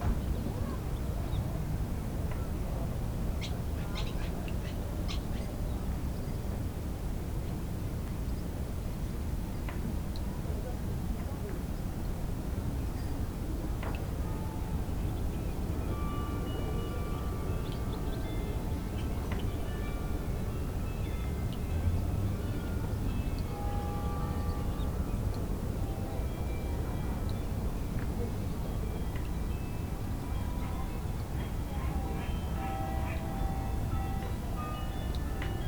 carillon in the distance
the city, the country & me: july 27, 2012